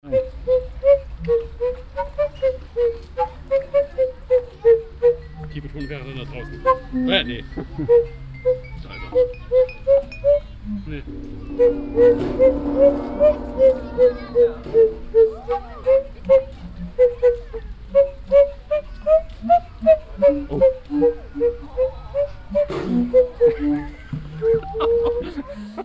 rudolstadt, theatre square, water orchestra - rudolstadt, theatre square, water orchestra 02
Another recording of the water orchestra. Here a violin player of the rudolstadt orchestra trying to intonate the tune of Freude schöner Götterfunken on a water pump organ.
soundmap d - topographic field recordings and social ambiences
6 October 2011, 16:21